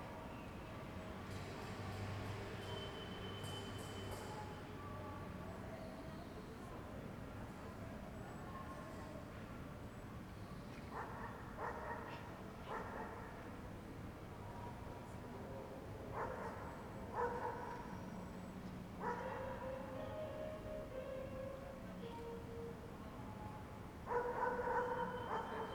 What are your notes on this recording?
Friday March 13 2020. Fixed position on an internal terrace at San Salvario district Turin, three days after emergency disposition due to the epidemic of COVID19. Start at 6:18 p.m. end at 6:48 p.m. duration of recording 30'00''